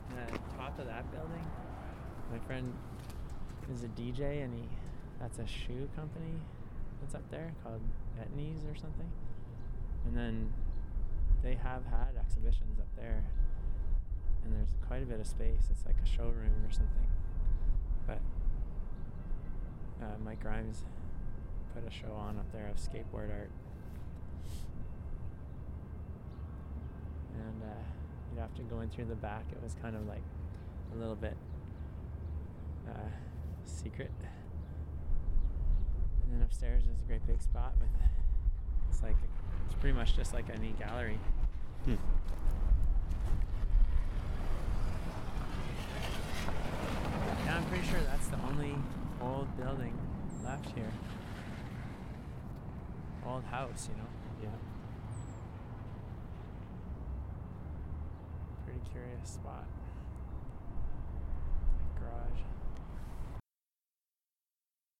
East Village, Calgary, AB, Canada - Secret space
This is my Village
Tomas Jonsson
9 April 2012